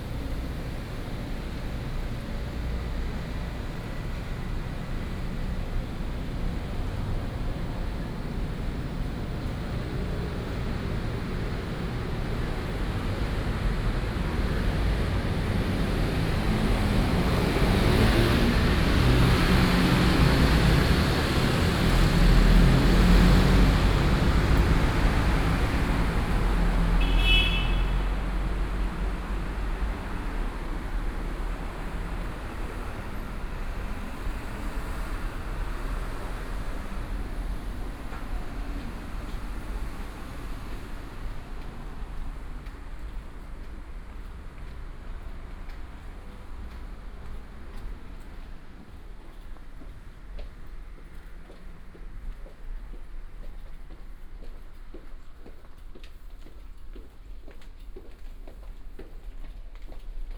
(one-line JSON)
{"title": "Rui’an St., Da’an Dist., Taipei City - walking in the Street", "date": "2015-07-30 16:57:00", "description": "Walking through the small alley, Then went into the MRT station, Traffic Sound", "latitude": "25.03", "longitude": "121.54", "altitude": "23", "timezone": "Asia/Taipei"}